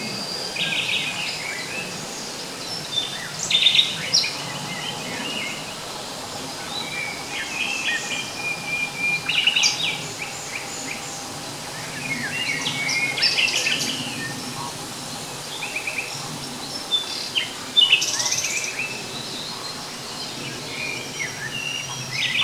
Frouzins, France - Birdsongs, wetland area Bidot park
Birdsongs in wetland area.